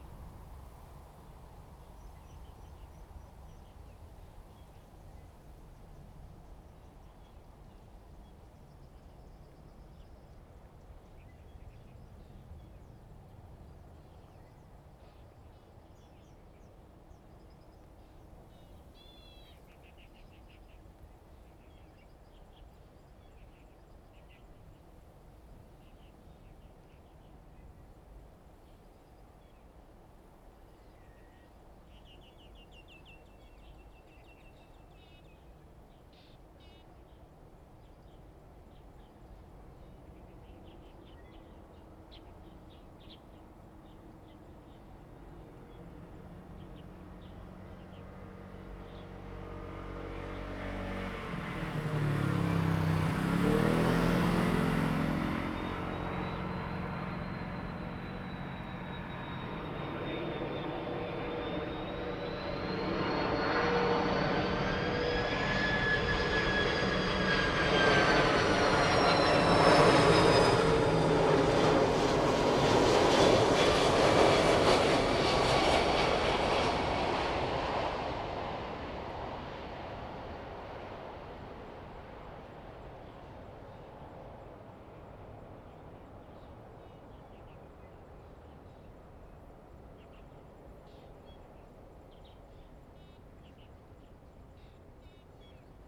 Near the airport, The plane landed, The plane was flying through, Zoom H2n MS+XY